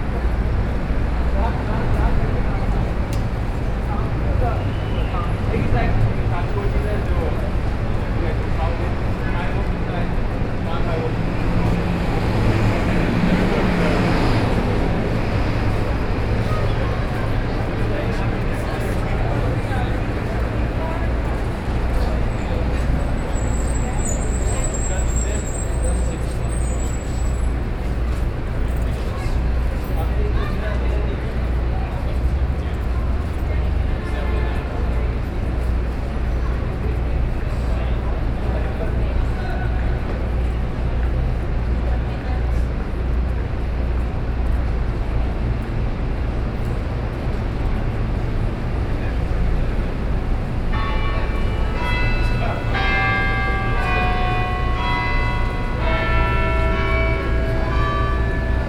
{"date": "2010-09-06 17:38:00", "description": "New York, Fifth Avenue, St Thomas Church", "latitude": "40.76", "longitude": "-73.98", "altitude": "20", "timezone": "America/New_York"}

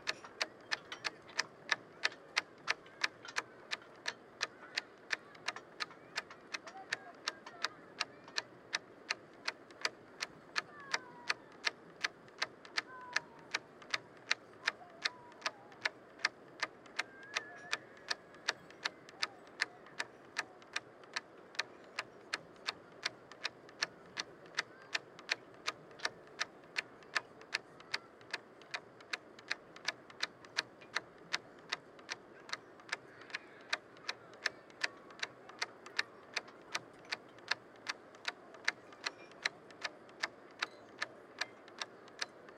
{"title": "Rue Arlette Davids, Wissant, France - Wissant (Pas-de-Calais - Côte d'Opale)", "date": "2022-09-25 16:30:00", "description": "Wissant (Pas-de-Calais - Côte d'Opale)\nMilieu d'après-midi\nle vent fait \"claquer\" les cordes sur les mats (bois et métal) des bateaux.\nZOOM F3 + Neumann KM 184", "latitude": "50.89", "longitude": "1.66", "altitude": "7", "timezone": "Europe/Paris"}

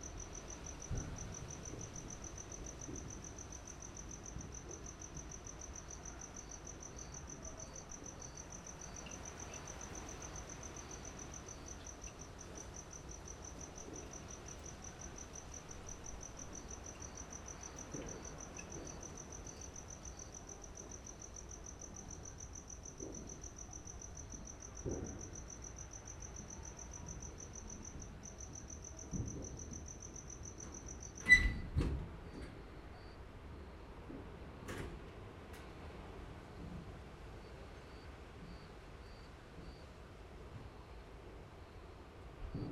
{"title": "St, Linden, Randburg, South Africa - End of 2017 Celebrations", "date": "2018-01-01 00:10:00", "description": "New Year Fireworks displays from private homes around North Western Johannesburg. Wind, dogs barking, fireworks and a light aircraft taking the aerial view of the celebration of the passing of 2017. Piezo EM172's on a Jecklin disc to SD702", "latitude": "-26.14", "longitude": "28.00", "altitude": "1623", "timezone": "Africa/Johannesburg"}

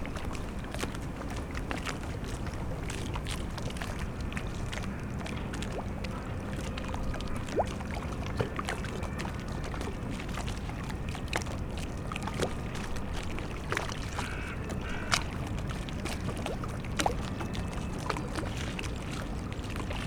{"title": "berlin, plänterwald: spree - the city, the country & me: spree river bank", "date": "2014-02-08 12:43:00", "description": "lapping waves of the spree river, squeaking drone of the ferris wheel of the abandonned fun fair in the spree park, distant sounds from the power station klingenberg, towboat moves empty coal barges away, crows\nthe city, the country & me: february 8, 2014", "latitude": "52.49", "longitude": "13.49", "timezone": "Europe/Berlin"}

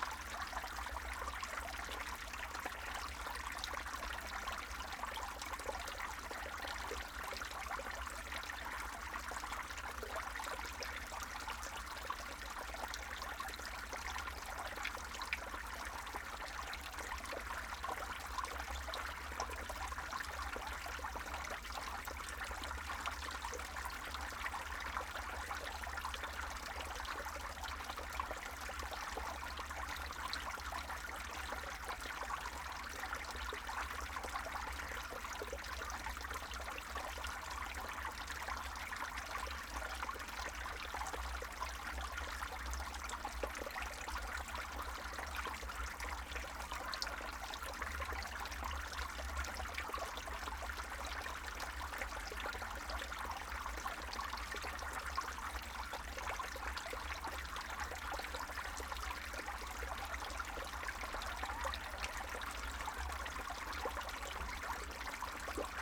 {"title": "Rosemary's Playground, Woodward Ave. &, Woodbine St, Ridgewood, NY, USA - Snow melting at Rosemary's Playground", "date": "2022-02-03 15:01:00", "description": "The last blizzard left Rosemary's Playground covered with a thick blanket of snow.\nThis recording captures the sound of the snow melting and going through the drainage system.", "latitude": "40.70", "longitude": "-73.90", "altitude": "28", "timezone": "America/New_York"}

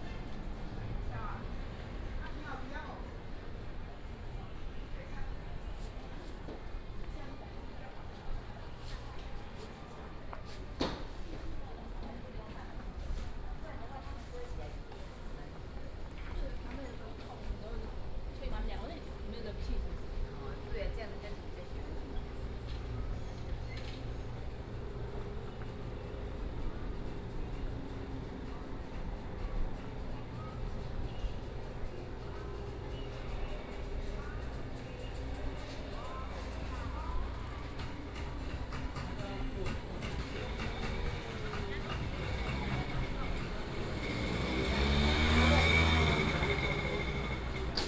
{"title": "Ln., Siwei Rd., Banqiao Dist., New Taipei City - Closing time", "date": "2015-07-29 16:02:00", "description": "Walking through the market, Closing time, Traffic Sound", "latitude": "25.03", "longitude": "121.46", "altitude": "16", "timezone": "Asia/Taipei"}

